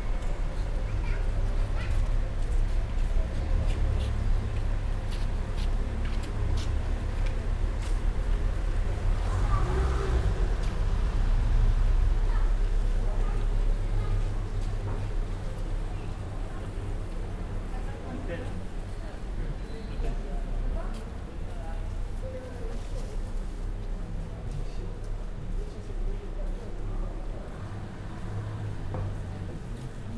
Ramblas, Barcelona, Spain - walk the sreets
walking the streets of barcelona, near Raval. recorder: Zoom H4n, church audio binaurals mics (omni capsules), attached in each side of a pair of headphones.
27 October 2011